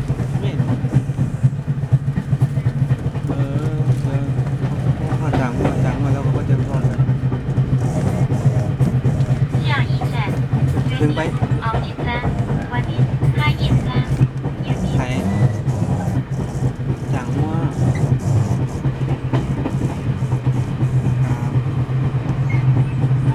Changhua, Taiwan - On the train
彰化縣(Changhua County), 中華民國, 22 January